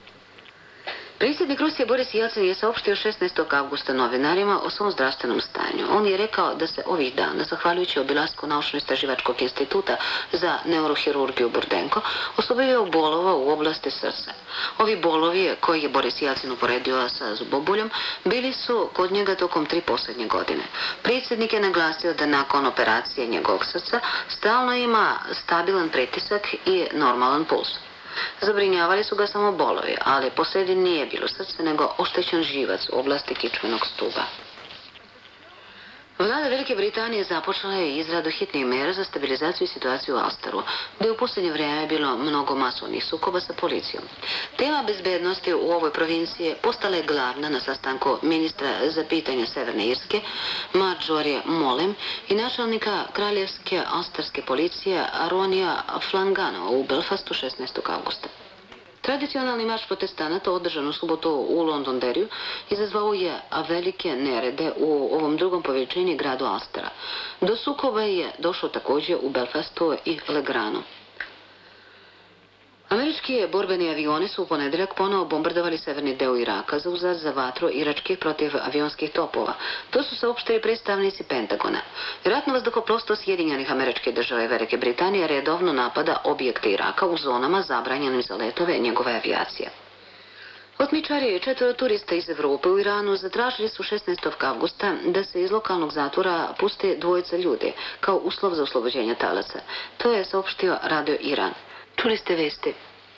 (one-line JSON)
{"title": "Radio under sanctions Belgrade, Serbia", "date": "1999-06-25 20:00:00", "description": "archive recording, from a trip to Belgrade in 1999", "latitude": "44.80", "longitude": "20.49", "altitude": "140", "timezone": "Europe/Belgrade"}